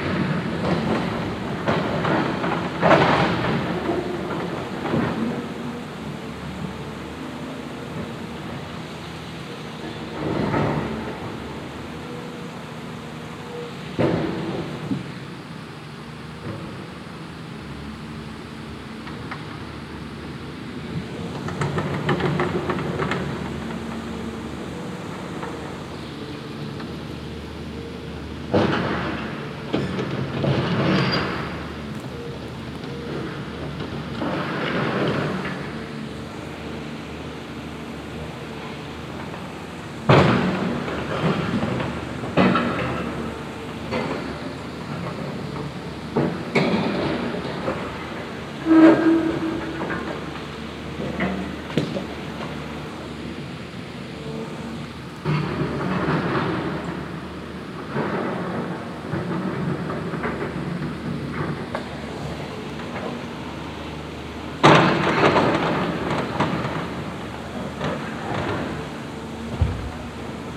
At a stone quarry. The sound of walking closer through steep grass and then the sound of a excavator moving stones in the valley like pot hole.
international sound scapes - topographic field recordings and social ambiences